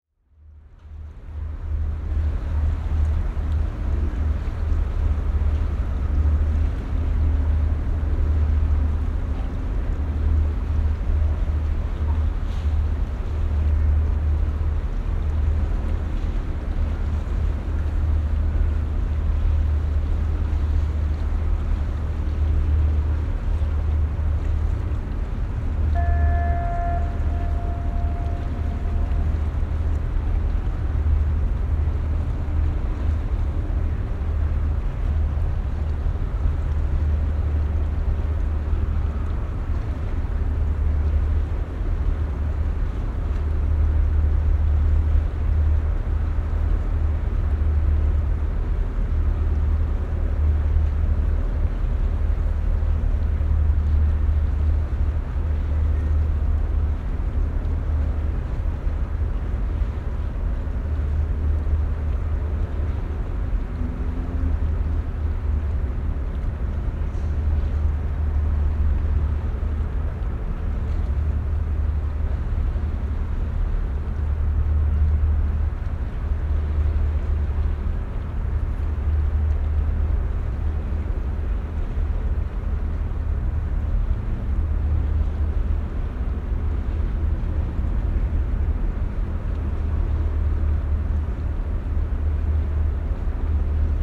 Bosporus soundscape from Istanbul Modern balcony
ship, ferry and air traffic on the Bosporus